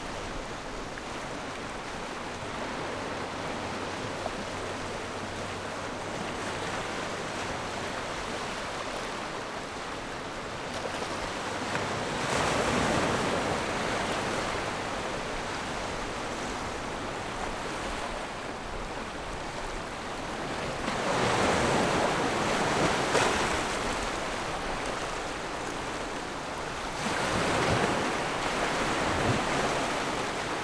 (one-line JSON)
{"title": "Dabaisha Diving Area, Lüdao Township - Diving Area", "date": "2014-10-30 14:56:00", "description": "Diving Area, sound of the waves\nZoom H6 +Rode NT4", "latitude": "22.64", "longitude": "121.49", "altitude": "3", "timezone": "Asia/Taipei"}